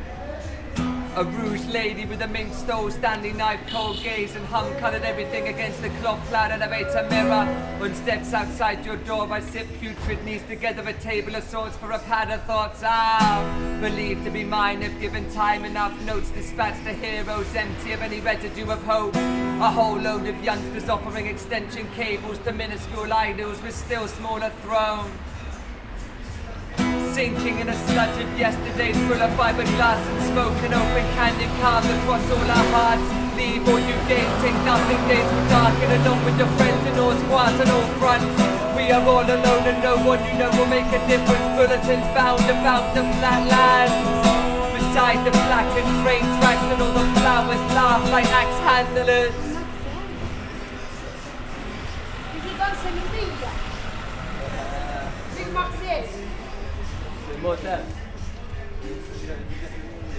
A Band of Buriers / Happening N°1 / Part 8